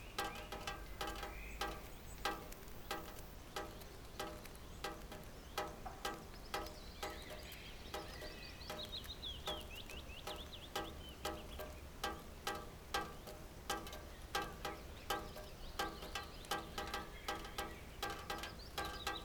Sasino, near gamekeeper's house - droplet drum solo
drops falling from a leaky, damaged, rusted drainpipe, twisting a nice dynamic solo on the drainpipe base. Buddy Rich would be impressed. fresh, sunny atmosphere after heavy rain.